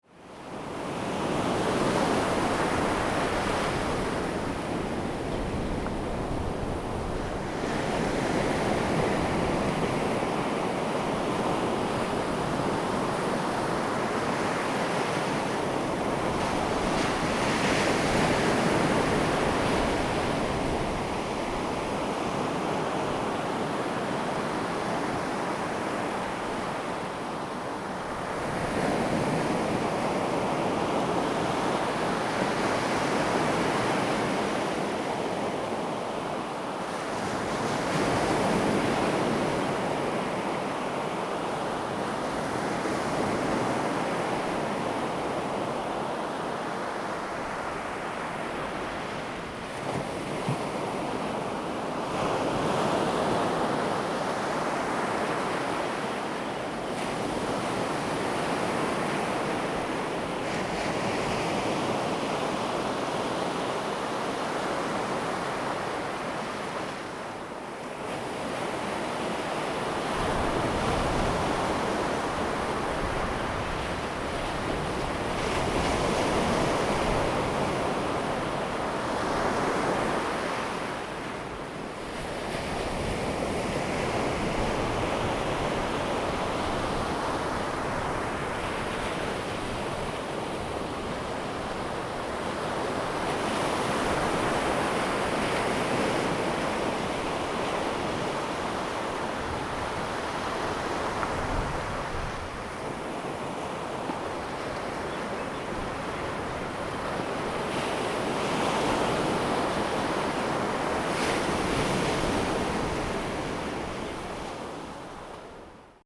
At the beach, Sound of the waves
Zoom H2n MS+XY
Hengchun Township, Pingtung County, Taiwan, April 2018